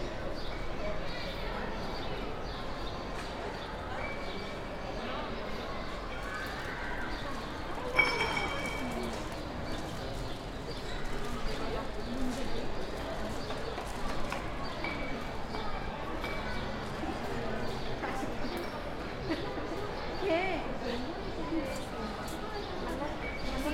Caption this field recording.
Break a Bottle, city noise, Captation : ZOOM H6